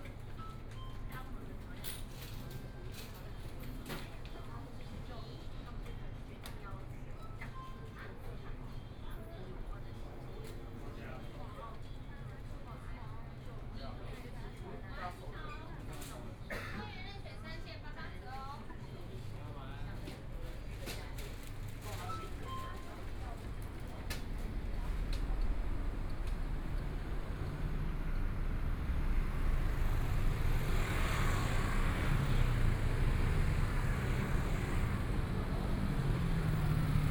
中山區中庄里, Taipei city - soundwalk
Walked across the park from convenience store, Traffic Sound, Binaural recordings, Zoom H4n+ Soundman OKM II
Taipei City, Taiwan, 15 February 2014